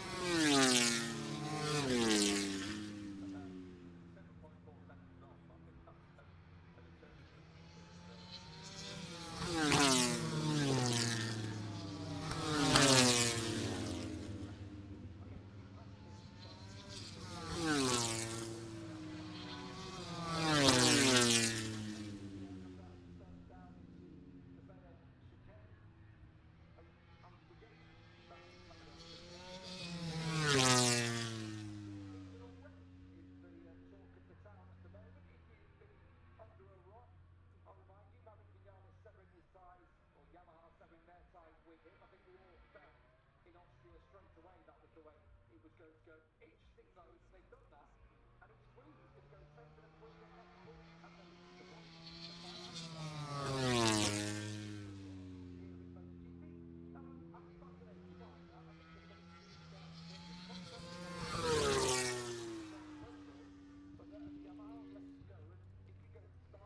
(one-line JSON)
{"title": "Silverstone Circuit, Towcester, UK - british motorcycle grand prix 2021 ... moto grand prix ...", "date": "2021-08-27 09:50:00", "description": "moto grand prix free practice one ... maggotts ... dpa 4060s to Zoom H5 ...", "latitude": "52.07", "longitude": "-1.01", "altitude": "158", "timezone": "Europe/London"}